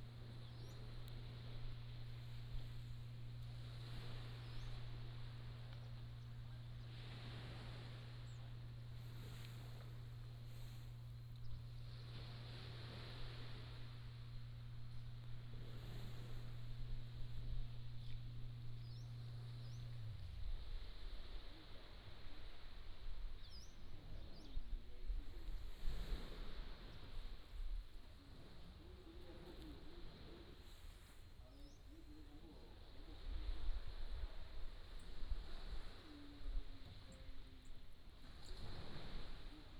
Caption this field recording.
Sound of the waves, In the beach